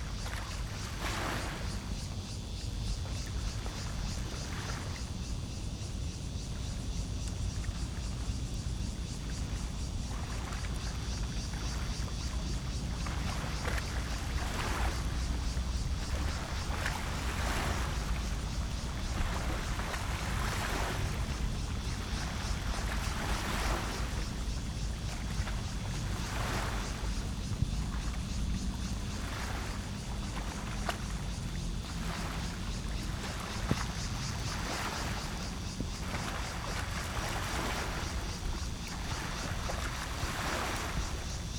Sec., Chengtai Rd., Wugu Dist., New Taipei City - Tide of sound
Tide of sound
Zoom H4n+ Rode NT4
Wugu District, New Taipei City, Taiwan, 6 July 2012, ~19:00